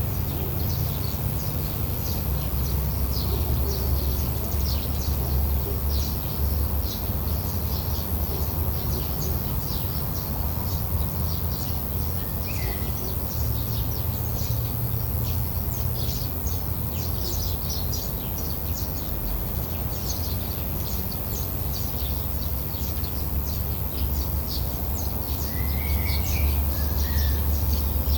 Njegoševa ulica, Maribor, Slovenia - insects by the side of the road
insects singing by the side of the dirt road on a hot, hot afternoon